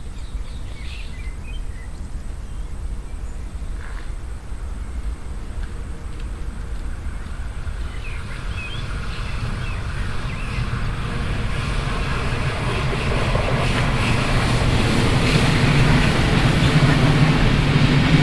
{"title": "cologne, stadtgarten, soundmap, kiesweg nahe bahndamm", "date": "2008-04-22 13:07:00", "description": "stereofeldaufnahmen im september 07 mittags\nproject: klang raum garten/ sound in public spaces - in & outdoor nearfield recordings", "latitude": "50.95", "longitude": "6.94", "altitude": "52", "timezone": "Europe/Berlin"}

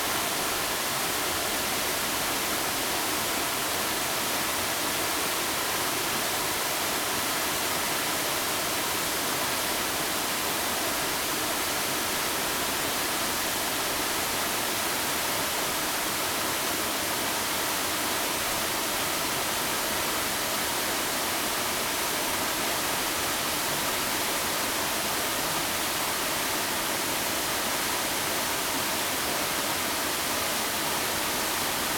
{"title": "觀音瀑布, 蜈蚣里Puli Township - Waterfalls", "date": "2016-12-13 13:51:00", "description": "waterfalls\nZoom H2n MS+XY +Sptial Audio", "latitude": "23.99", "longitude": "121.04", "altitude": "686", "timezone": "GMT+1"}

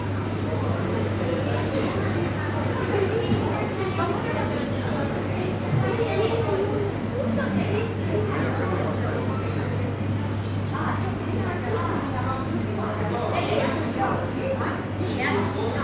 {"title": "Baltijaam meat market sounds 1, Tallinn", "date": "2011-04-19 14:22:00", "description": "sounds of the meat market in Baltijaam market", "latitude": "59.44", "longitude": "24.73", "altitude": "19", "timezone": "Europe/Tallinn"}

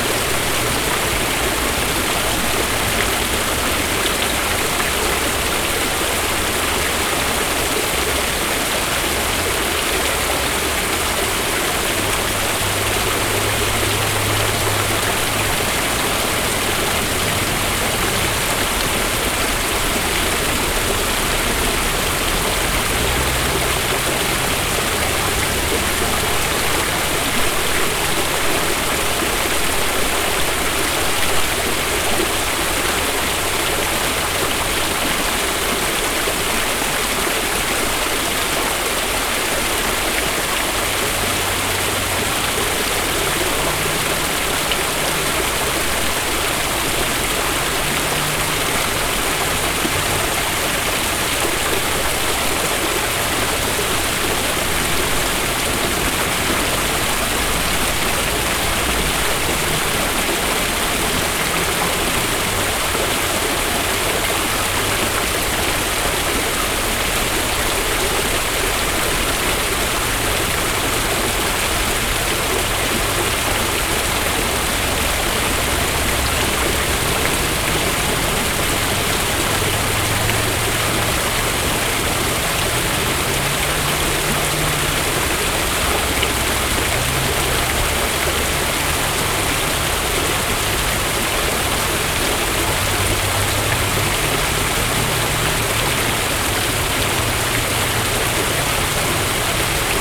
{"title": "Old Spicewood Springs Rd, Austin, TX, USA - Lower Bull Creek Waterfall, Austin Texas", "date": "2019-07-18 09:55:00", "description": "Recording of a waterfall on lower Bull Creek, part of the network of green belts in Austin, Texas. Recorded with a Tascam DR22, at about two meters distance.", "latitude": "30.38", "longitude": "-97.77", "altitude": "191", "timezone": "America/Chicago"}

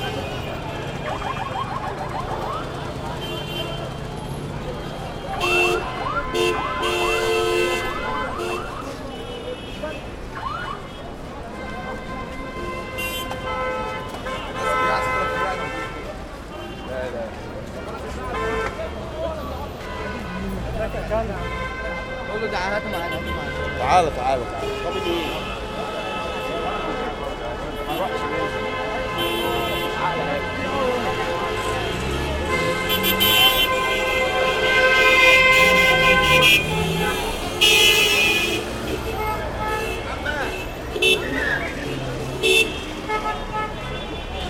{"title": "talat harb, cairo, massive jam", "date": "2010-05-10 18:46:00", "description": "masssive traffic jam in cairo", "latitude": "30.05", "longitude": "31.24", "altitude": "44", "timezone": "Africa/Cairo"}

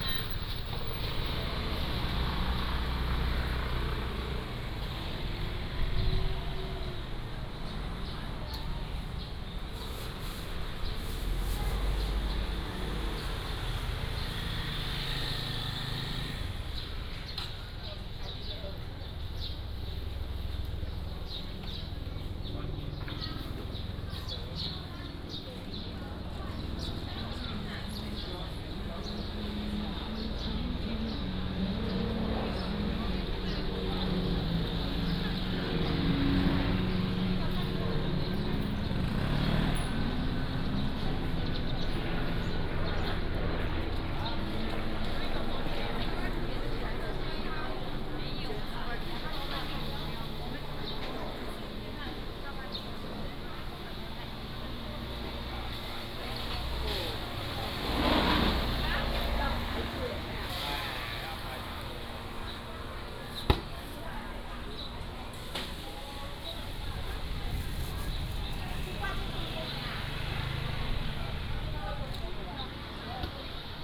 {"title": "中正一巷, Sanyi Township - Walking in the market", "date": "2017-02-16 11:22:00", "description": "Walking in the market, Traffic sound, The sound of birds, Helicopter flying through", "latitude": "24.41", "longitude": "120.77", "altitude": "282", "timezone": "GMT+1"}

